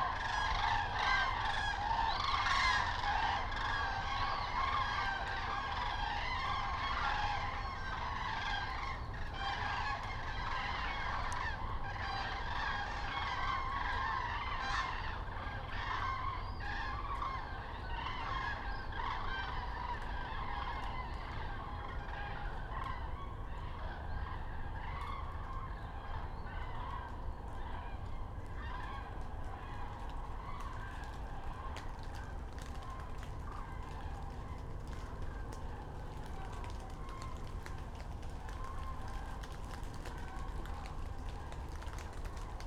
GRUES CENDRÉES EN MIGRATION NOCTURNE SAINT PIERRE DU MONT - 63 Rue Jules Ferry, 40280 Saint-Pierre-du-Mont, France - GRUES CENDRÉES DANS LA BRUME
Extraordinaire théâtre des oreilles que ces Grues Cendrées en pleine migration qui passaient ce soir là par Saint Pierre du Mont à proximité de Mont de Marsan. Le brouillard est établie, comme une brume, et seuls leurs chants sont présent et même très présent! Spectacle sublime et incroyable dans un cadre urbain!
Nouvelle-Aquitaine, France métropolitaine, France